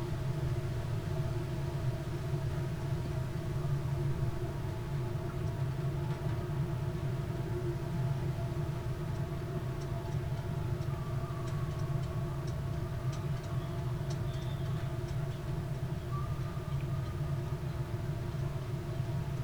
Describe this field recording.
mic in a metal box trolley, reeds swayed by the wind, the city, the country & me: june 28, 2013